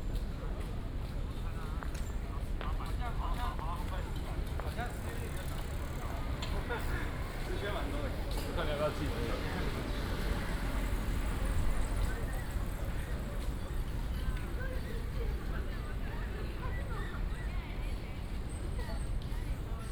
Royal Palm Blvd., National Taiwan University - Go to university entrance
walking in the university, Traffic Sound, Bicycle sound
Taipei City, Taiwan, March 2016